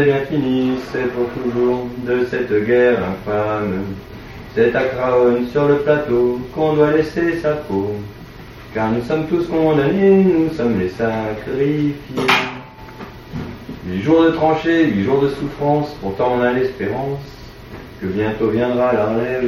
ostrehystereo#pierre rec: jrm 12/2006